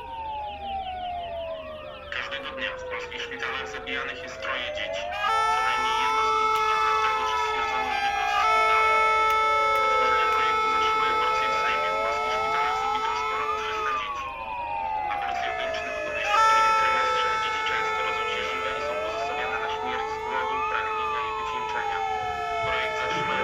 Anti-abortion protestors in a sound battle with the Sirens.